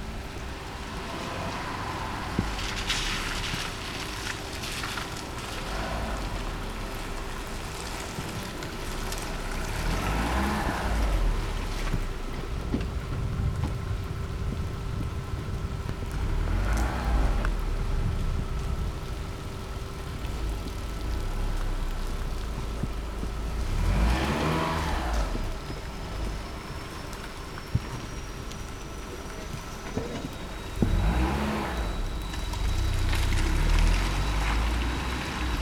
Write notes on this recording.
quiet ambience among the apartment buildings after a strong storm. rain drops sliding from leaves, whirring ac units of a nearby discount store, some bird calls, some echoed conversations.